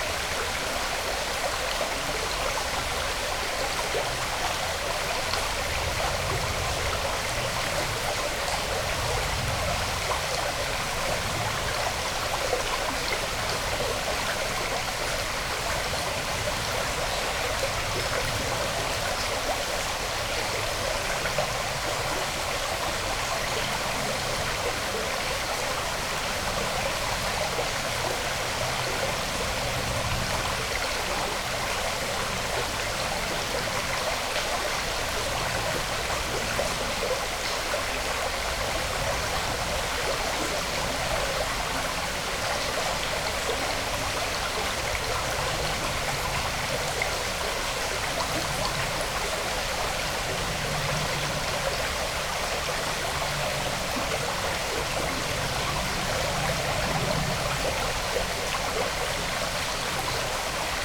Near Gaberje - Under stone birdge on stream Branica. Lom Uši Pro, Mix Pre3 II